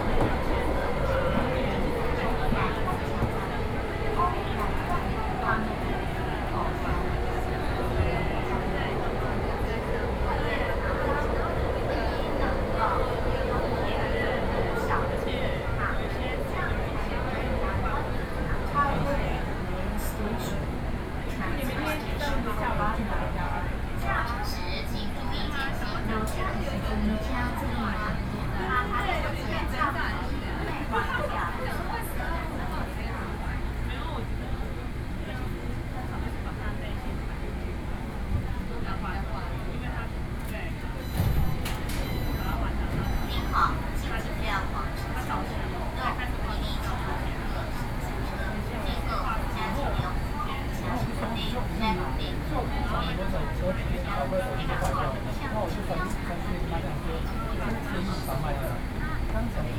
Underpass, Mrt Stations, Sony PCM D50 + Soundman OKM II
台北市 (Taipei City), 中華民國